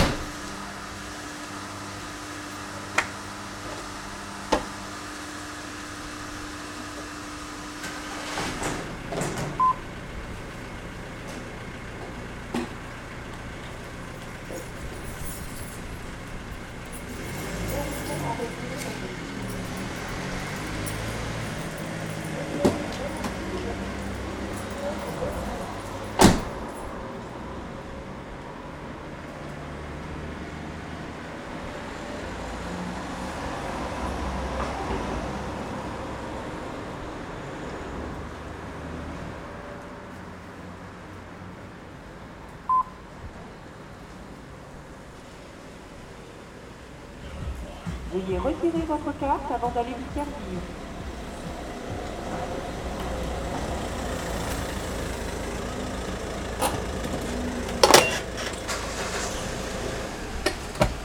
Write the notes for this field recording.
France, Auvergne, Gas station, car